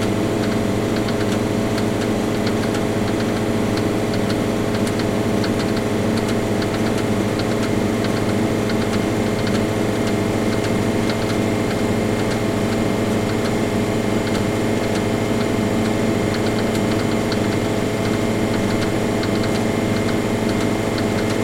A. Juozapavičiaus pr., Kaunas, Lithuania - Old air conditioning unit
An old air conditioning unit, recorded with ZOOM H5.
6 May 2021, ~10:00